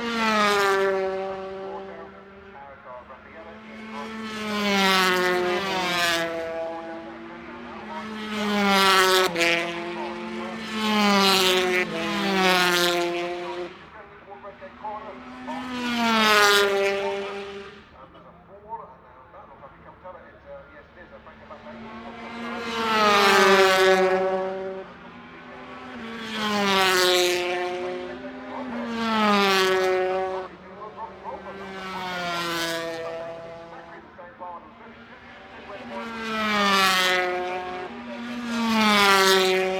British Motorcycle Grand Prix 2004 ... 250 Qualifying ... one point stereo mic to minidisk ... date correct ... time optional ...
Derby, UK, 23 July